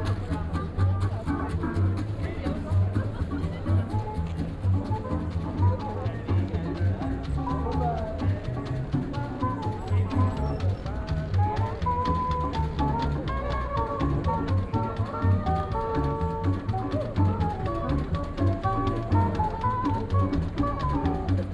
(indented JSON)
{
  "title": "Old Town Square, Praha, Czechia - (96 BI) Jazz band",
  "date": "2017-01-29 11:50:00",
  "description": "Binaural recording of a jazz band on an Old Town square.\nRecorded with Soundman OKM + Zoom H2n",
  "latitude": "50.09",
  "longitude": "14.42",
  "altitude": "204",
  "timezone": "Europe/Prague"
}